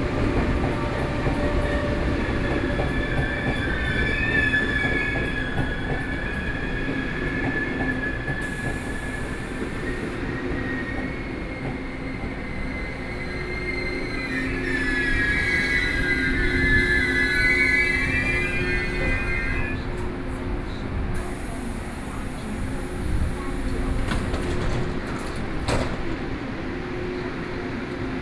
Chiayi Station, Taiwan - In the station platform
In the station platform
Chiayi City, Taiwan, 3 September 2014